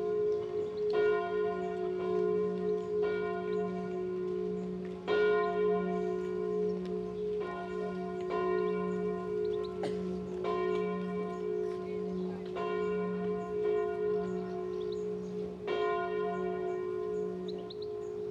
Bell from the Cathedral.
Stereo mic, cassette recorder
Avignon, France, 5 August 1991, 14:00